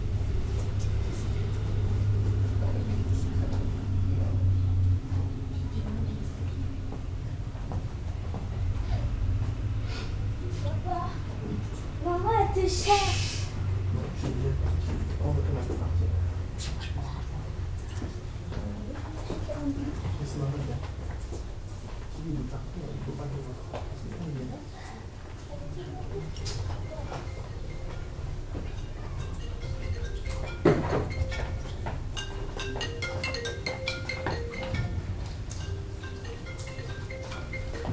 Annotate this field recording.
A quiet library space for the 'Societe et Civilisation' section (recorded using the internal microphones of a Tascam DR-40)